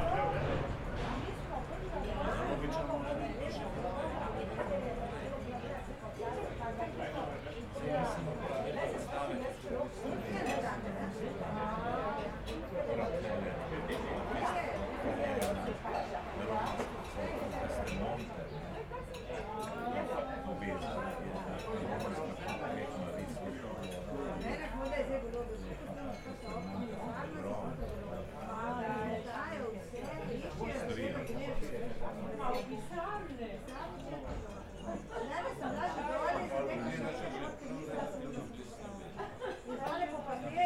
Restavracija Pecivo - relax ob 12h
Čas kosila pred restavracijo v starem predelu Nove Gorice
Nova Gorica, Slovenia, 20 June